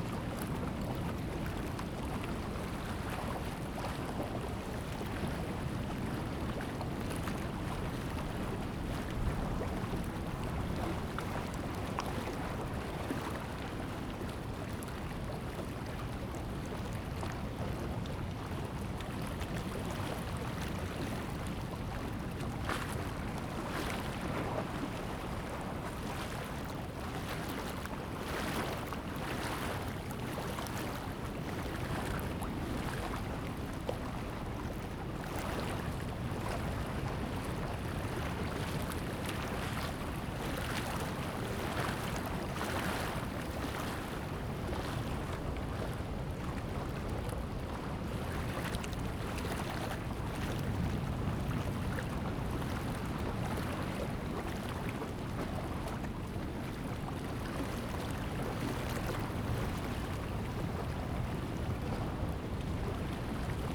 Sound tide, Small pier, sound of the waves
Zoom H2n MS+XY